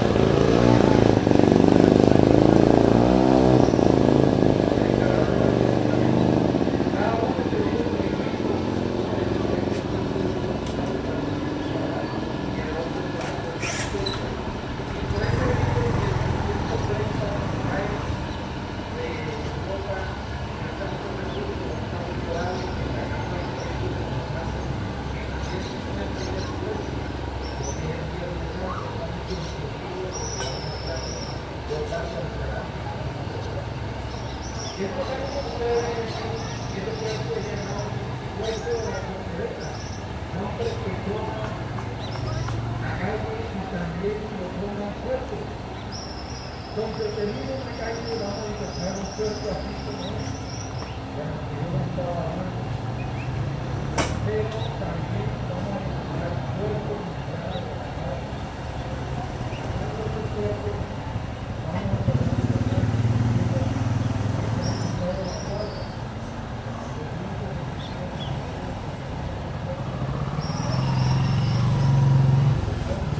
Albarrada, Mompós, Bolívar, Colombia - Barco
Un planchón turístico pasa por el río. Unos niños en la rivera persiguen a un buitre herido.
Depresión Momposina, Bolívar, Colombia